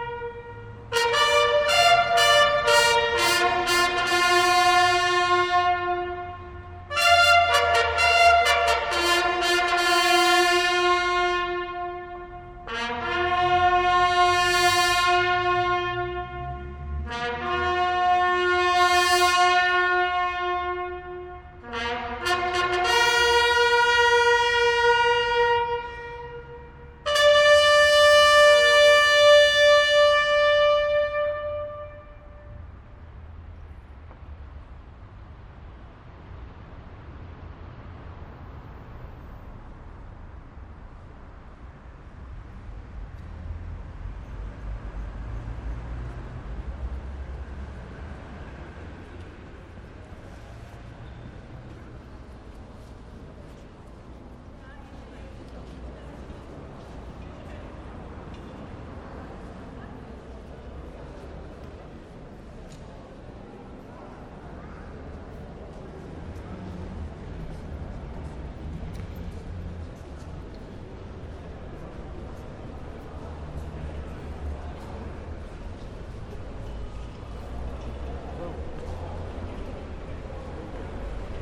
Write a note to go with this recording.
each day, since 1928 at 20.00 last post is played at the Meenenpoort in Ieper, this recordign is made on an ondinary day, it is very remarkable how fast cars start driving trough the gate again after the last post was played for that day, rememenbrence is for different worlds